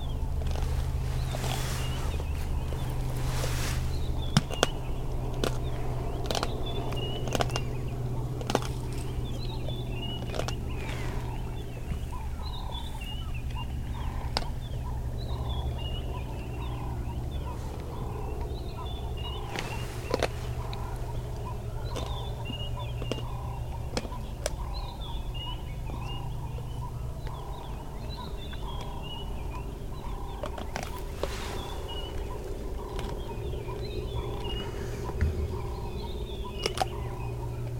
The sounds of a group of 3 lions eating the bones of an Impala antelope. recorded from a game viewing vehicle with EM172 capsules concealed in my "beanie" to a Sony ICD-UX512.